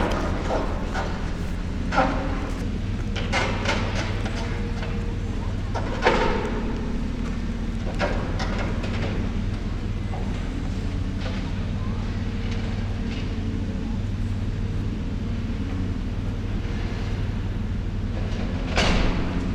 Dresden; Abriss Centrum-Warenhaus
07. März 2007, Prager Strasse
Deutschland, European Union